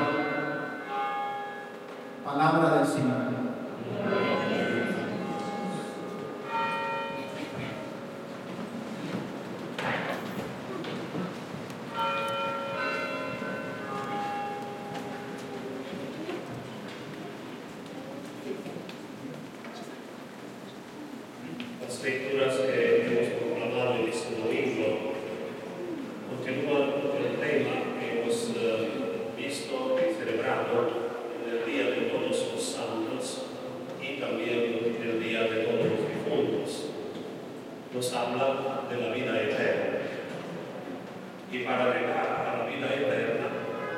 Catalpa Ave, Ridgewood, NY, USA - St. Matthias Church in Ridgewood, NY
Sunday Mass at St. Matthias Church in Ridgewood, NY.
Zoom h6
United States of America, November 2020